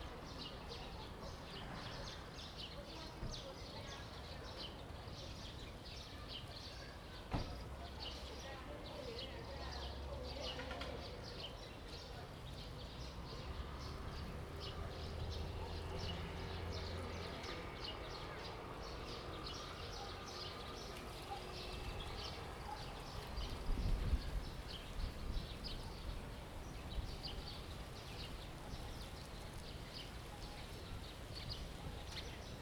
文正國小, 雲林縣水林鄉 - At the entrance of the primary school
Small village, At the entrance of the primary school, Traffic sound, Environmental sound
Zoom H2n MS +XY